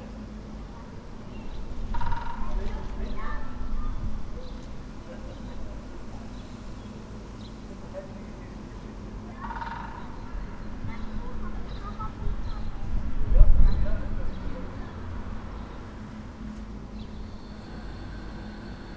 from/behind window, Mladinska, Maribor, Slovenia - spring, woodpecker
16 March 2014, 10:04